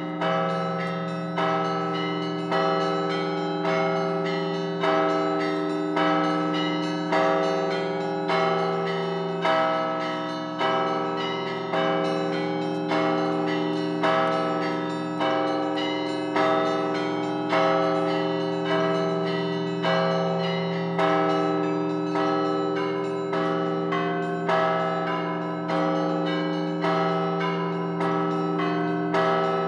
One of the bell concerts in the Spaso-Efimiev Monastery (Monastery of Saint Euthymius), which take place in the beggining of every hour in a day.
Recorded with Zoom H2n near the bell tower.
Центральный федеральный округ, Россия, 13 June